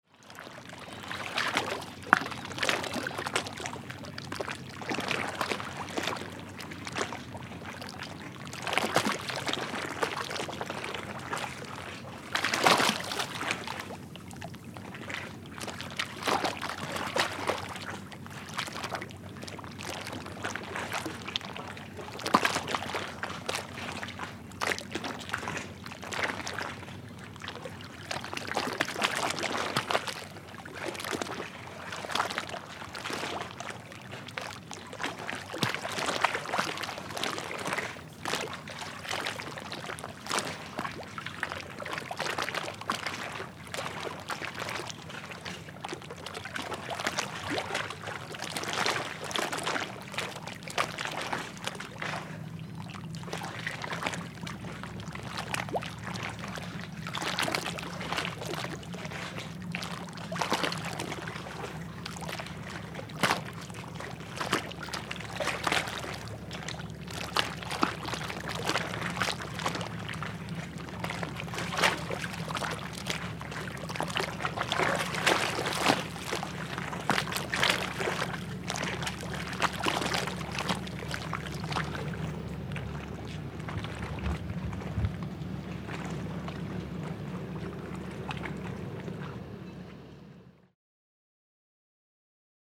North Sydney Wharf - Water lapping against the wharf
Sludgy sounding water lapping against the wharf on a calm evening - MKH 416, Zoom H4n